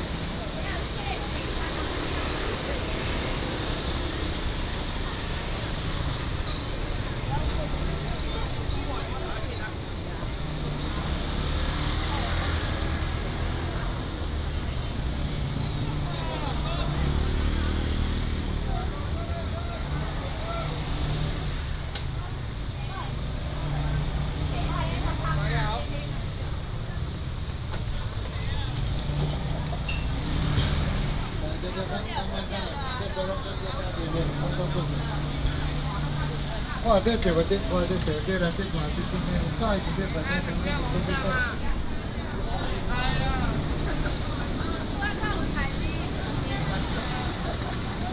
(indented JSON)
{
  "title": "Ln., Yumin St., North Dist., Tainan City - Outdoor market",
  "date": "2017-02-18 10:44:00",
  "description": "Outdoor market, Traffic sound, Sellers selling sound",
  "latitude": "23.00",
  "longitude": "120.20",
  "altitude": "21",
  "timezone": "GMT+1"
}